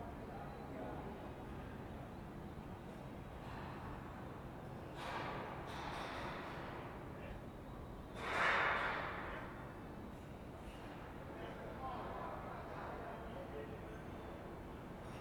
Ascolto il tuo cuore, città. I listen to your heart, city. Several chapters **SCROLL DOWN FOR ALL RECORDINGS** - "Terrace mid January afternoon, in seclusion in the time of COVID19": Soundscape
"Terrace mid-January afternoon, in seclusion in the time of COVID19": Soundscape
Chapter CLXXXV of Ascolto il tuo cuore, città. I listen to your heart, city
Friday, January 14th, 2022. Fixed position on an internal terrace at San Salvario district Turin, About second recording of 2022 and first recording being myself in seclusion as COVID 19 positive
Start at 1:16 p.m. end at 1:46 p.m. duration of recording 29'37''.
Portable transistor radio tuned on RAI-RadioTre acts as a time and place marker.
Torino, Piemonte, Italia, 14 January